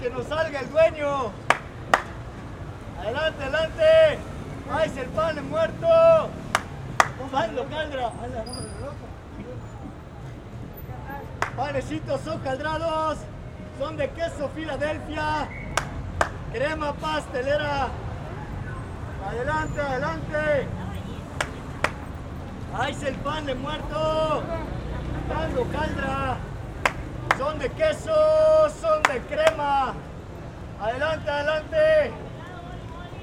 {"title": "x 56 y, C., Centro, Mérida, Yuc., Mexique - Merida - Pan de Muerto", "date": "2021-10-30 11:30:00", "description": "Merida - Mexique\nPour la \"fête des morts\" (Toussaint - 31 octobre)\nvente du \"pain de mort\" (brioche)", "latitude": "20.96", "longitude": "-89.62", "altitude": "13", "timezone": "America/Merida"}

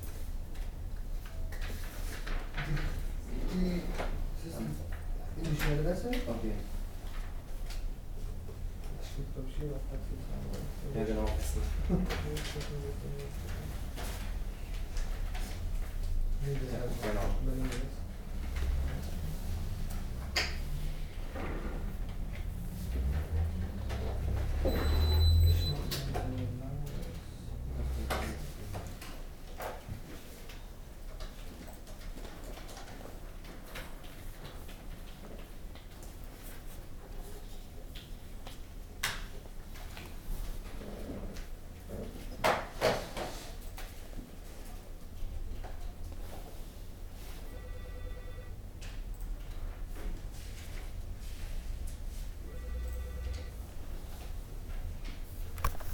{"title": "cologne, bayardsgasse 5, indo german consultancy services", "date": "2011-02-10 16:09:00", "description": "inside the indo german consultancy services. small office where people apply for visa to travel to asian countries.\nsoundmap d - social ambiences and topographic field recordings", "latitude": "50.93", "longitude": "6.95", "altitude": "55", "timezone": "Europe/Berlin"}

